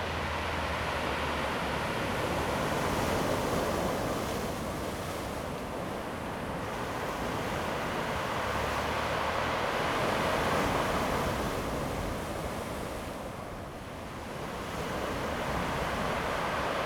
Sound of the waves, Traffic Sound, Thunder
Zoom H2n MS+XY
Taitung County, Changbin Township, 花東海岸公路, 8 September 2014